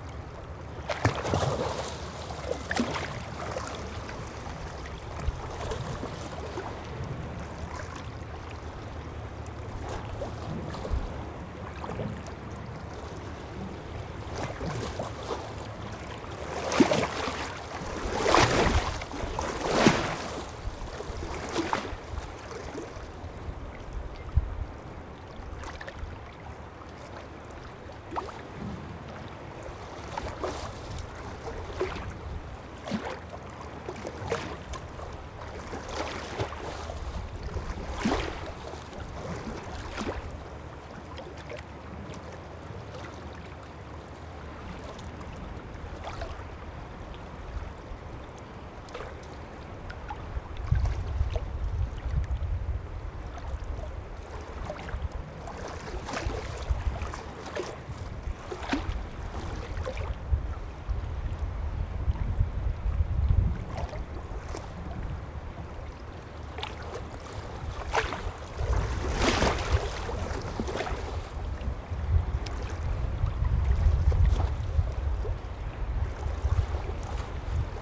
monheim, rhein bei hochwasser
frühjahr 07 nachmittags - rheinhochwasser ruhig und langsam ziehend, gluckern bei umspültem anlegestand - monoaufnahme direkt mikrophonie
soundmap nrw - sound in public spaces - in & outdoor nearfield recordings